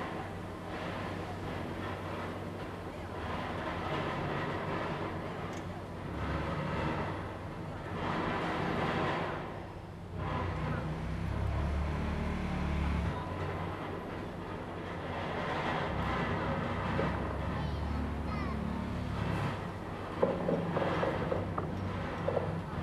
Siwei Park, Banqiao Dist., New Taipei City - Sound from the construction site
In the Park, Sound from the construction site
Zoom H2n MS+ XY